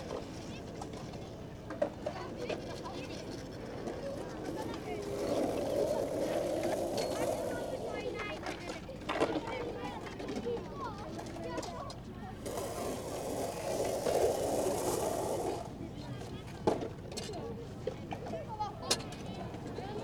Hollihaka skatepark, Oulu, Finland - Kids skateboarding at the Hollihaka skatepark
Large amount of kids skating at a skatepark in Oulu on the first proper, warm summer weekend of 2020. Zoom H5 with default X/Y module.
Manner-Suomi, Suomi, 24 May 2020